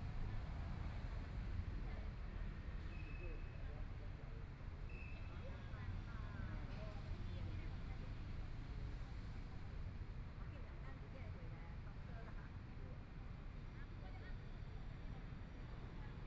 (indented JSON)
{
  "title": "ZhongYuan Park, Taipei City - Elderly chatting",
  "date": "2014-02-17 16:06:00",
  "description": "Afternoon sitting in the park, Traffic Sound, Sunny weather, Community-based park, Elderly chatting\nBinaural recordings, Please turn up the volume a little\nZoom H4n+ Soundman OKM II",
  "latitude": "25.06",
  "longitude": "121.53",
  "timezone": "Asia/Taipei"
}